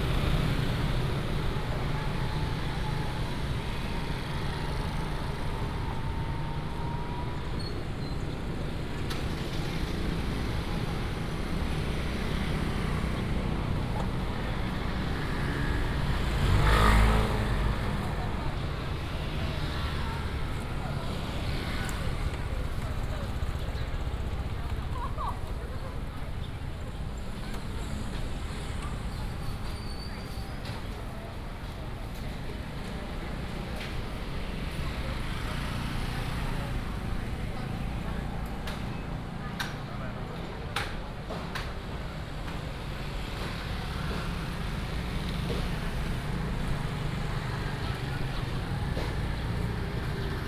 Dexing Rd., Huwei Township - Walking in the market
Walking in the market, motorcycle, Vendors, Helicopter sound
Huwei Township, Yunlin County, Taiwan, 3 March 2017, ~10:00